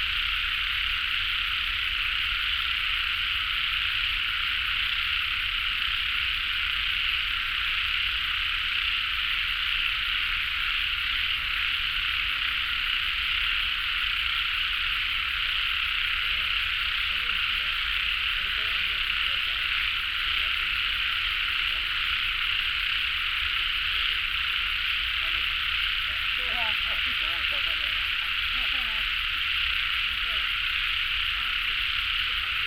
關渡里, Taipei City - Frogs sound
Frogs sound, Traffic Sound, Environmental Noise
Binaural recordings
Sony PCM D100+ Soundman OKM II + Zoom H6 MS